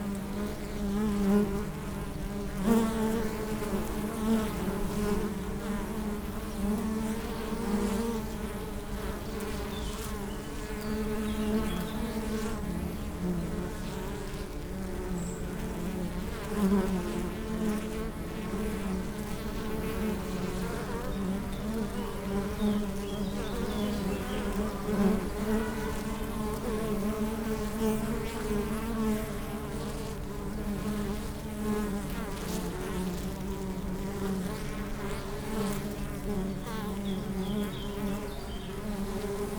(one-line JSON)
{"title": "Friedhof Columbiadamm, Berlin - bee hive", "date": "2019-06-20 14:00:00", "description": "(Sony PCM D50, DPA4060)", "latitude": "52.48", "longitude": "13.41", "altitude": "50", "timezone": "Europe/Berlin"}